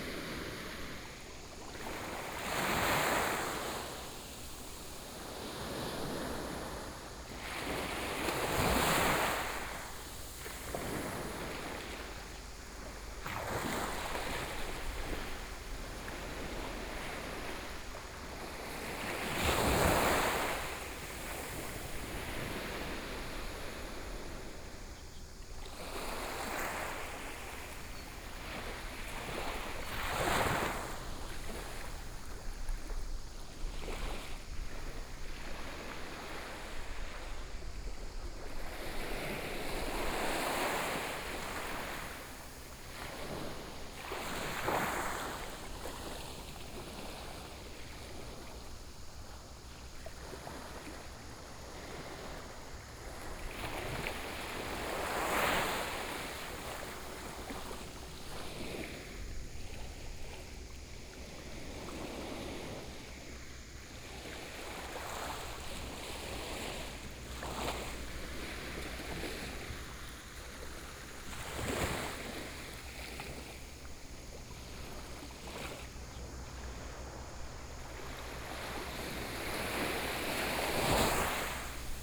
In the small fishing port, Sound of the waves
Shoufeng Township, 花東海岸公路54號, 28 August 2014, 17:49